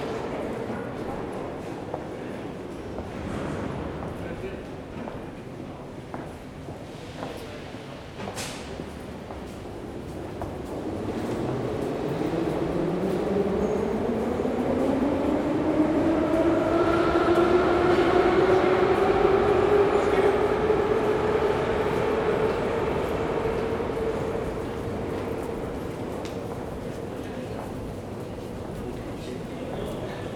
Metro. from north-west to center, Moscow, Russia - Station. Escalators, more trains far away that still sound close. Exit
After a few stops of which the distance is about 5 minutes, FULL SPEED, we reach one of the incredilbly grand and impressively decorated stations and leave the train. nonstop you hear new trains arriving and leaving while we are taking the endless escalator up to the daylight.
Moskva, Russia, 22 July 2015, ~17:00